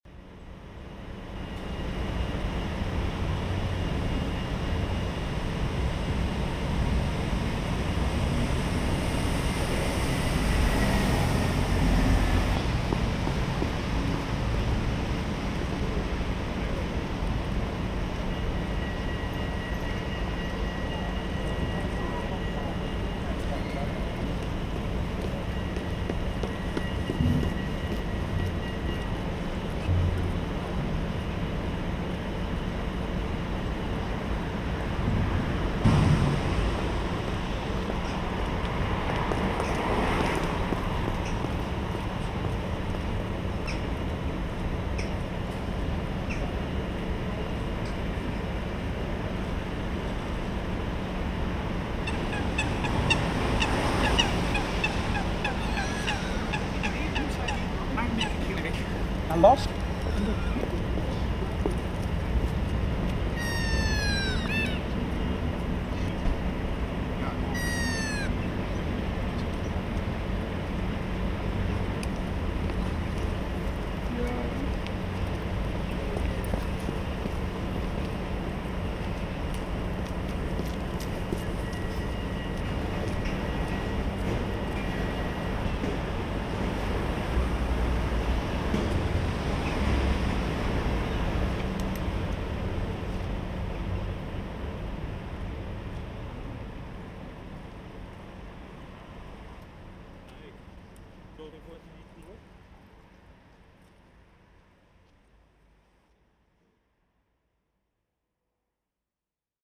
Prinsessegracht, Den Haag, Pays-Bas - Ambience in the street
In the street in front of Royal Academy of Art, Zoom H3-VR